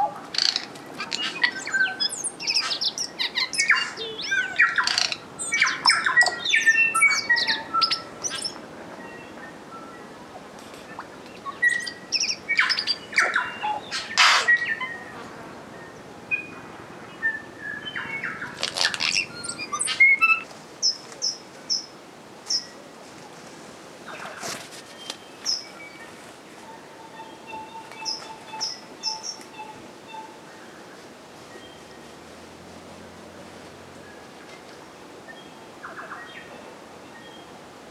Saint Arnaud, Nový Zéland - birds st.arnaud NZ
Saint Arnaud, New Zealand, 19 March